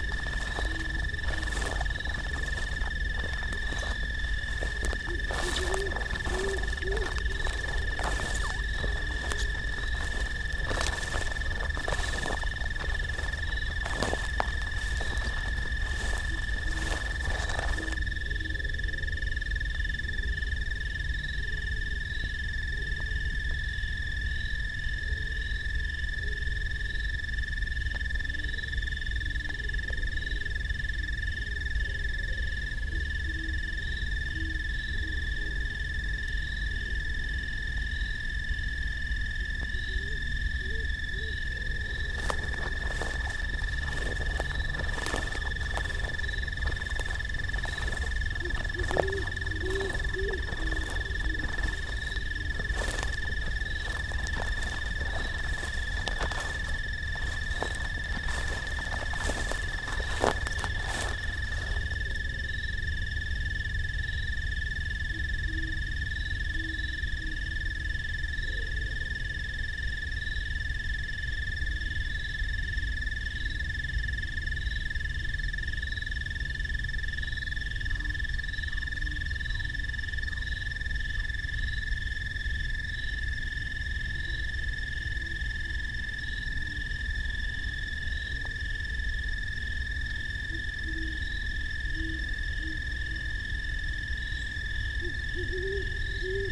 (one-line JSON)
{"title": "Commercial Township, NJ, USA - night field crossing ll", "date": "2016-09-28 03:23:00", "description": "great horned owls and screech owls are featured calling as I circle my tracks in a field, pre-dawn.", "latitude": "39.34", "longitude": "-75.06", "altitude": "14", "timezone": "America/New_York"}